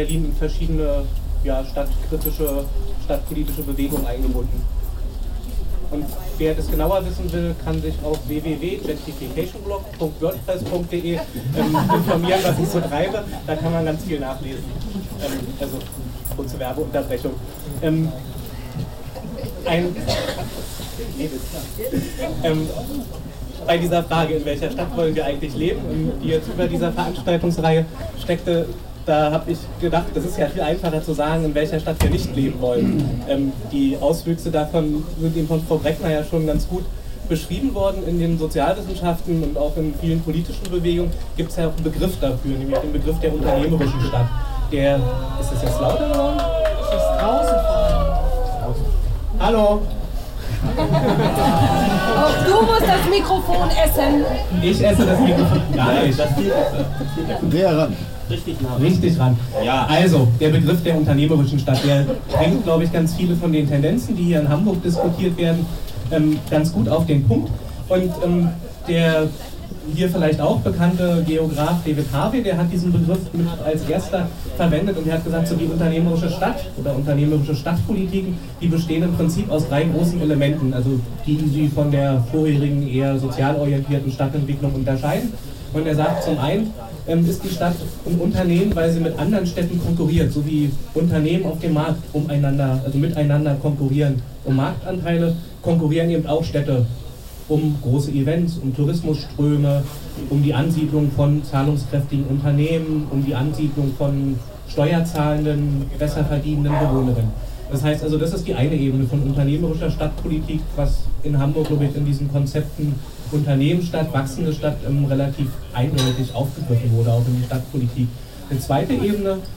Dr. Andrej Holm. In welcher Stadt wollen wir leben? 17.11.2009. - Gängeviertel Diskussionsreihe. Teil 1
„Die Stadt gehört ja eigentlich allen“ mit:
- Dr. Andrej Holm / Institut für Humangeographie Goethe-Universität FFM
- Prof. Dr. Ingrid Breckner / Stadt- und Regionalsoziologie HCU-Hamburg
- Christoph Schäfer / Park Fiction, Es regnet Kaviar, Hamburg
- Moderation: Ole Frahm / FSK, Hamburg
Hamburg, Germany, 18 November 2009